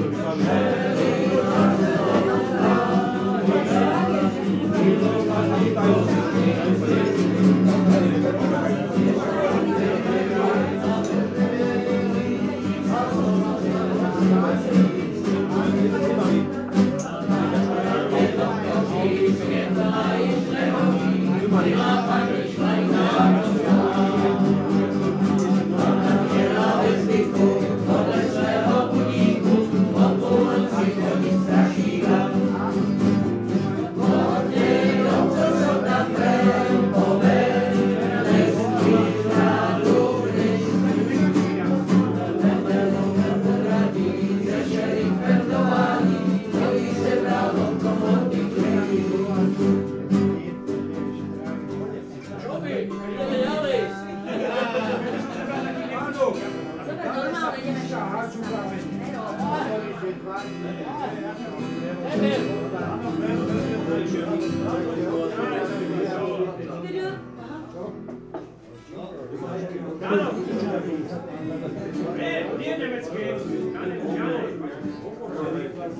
Bratislava, Drevená dedina - Trampský večer - Tramp evening
Every wednesday evening Bratislava‘s Tramps are gathering in some of the few remaining long standing pubs to celebrate their tradition, drinking and singing together.
Bratislava, Slovakia, 19 March, ~20:00